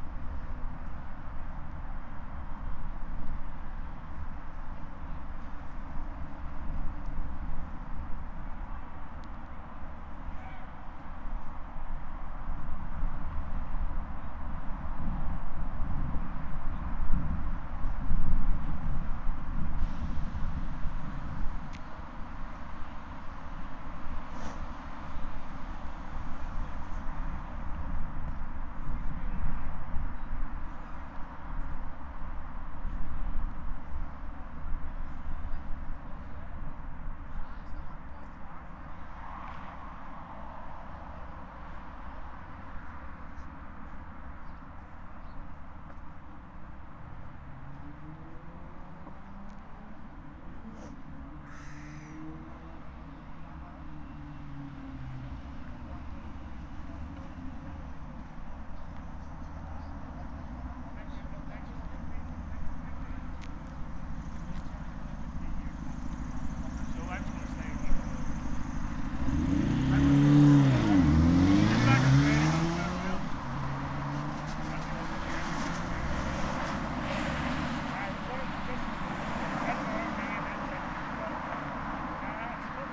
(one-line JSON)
{"title": "Lagan Footpath - soundwalk near the Lagan", "date": "2010-02-18 17:33:00", "description": "Soundwalk near the lagan path.\nUse headphones for better reproduction.", "latitude": "54.59", "longitude": "-5.92", "altitude": "4", "timezone": "Europe/Berlin"}